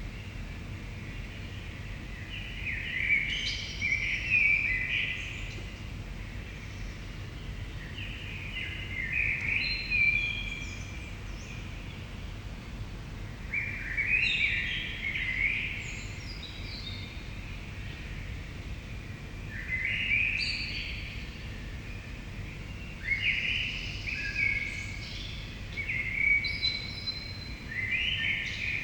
Aronia, Italy. Early morning - blackbird, lonely walker.
Italy, street, blackbird, footsteps, Arona